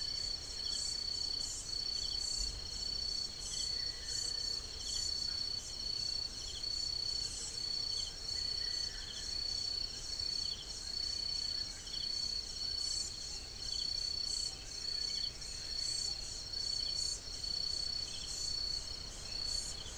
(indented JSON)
{
  "title": "Maweni Farm, Soni, nr Lushoto, Tanzania - evening, birds - inhabited landscape 3",
  "date": "2011-12-07 18:11:00",
  "latitude": "-4.86",
  "longitude": "38.38",
  "altitude": "1284",
  "timezone": "Africa/Dar_es_Salaam"
}